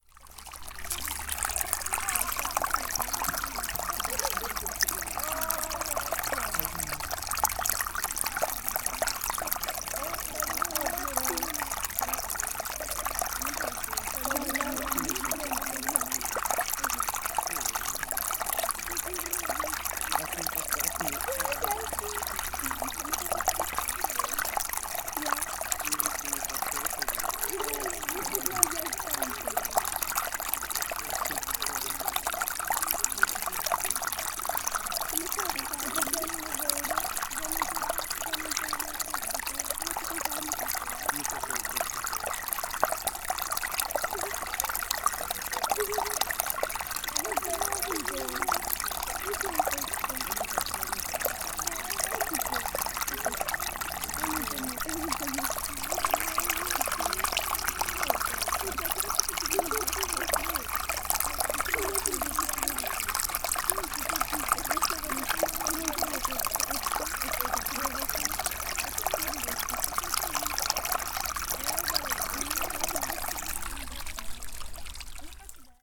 R. do Bairro dos Freixos, Boidobra, Portugal - Caminhada PreAmp
Parque Multiusos Duppigheim - Tascam DR-05 - PreAmp - Projeto Abraça a Escola